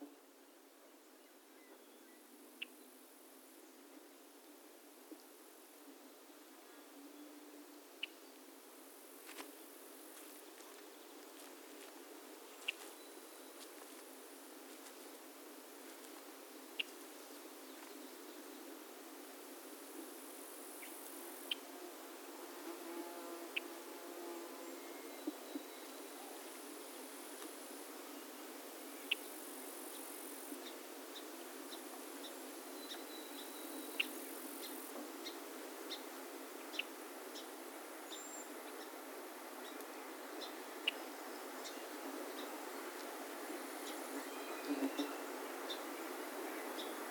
Orthoptères, oiseaux et amphibiens dans les marais à Scirpe au bord du Saint-Laurent, juillet 2013
QC, Canada, 22 July 2013, 10:00